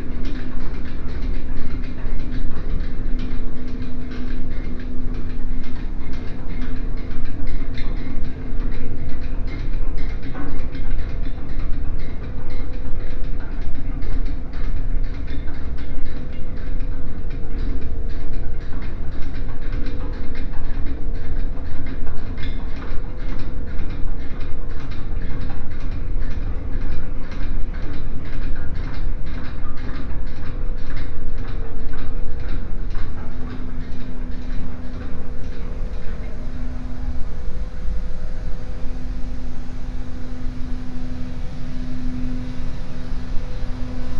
Ferry Engine Room, Feock, Cornwall, UK - Pulling Chains and Engine Room (Binaural Recording)
A short binaural recording of the chain pulleys and the engine room, from inside the Estuary Ferry.